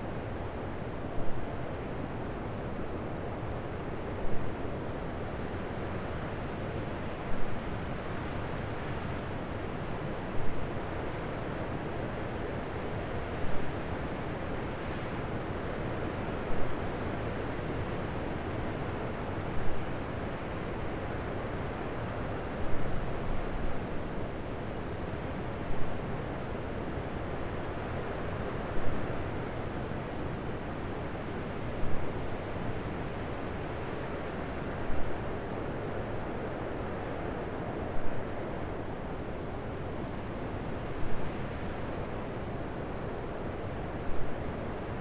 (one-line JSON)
{"title": "neoscenes: wind in the pines", "date": "2011-12-18 18:00:00", "latitude": "40.04", "longitude": "-105.38", "altitude": "2150", "timezone": "America/Denver"}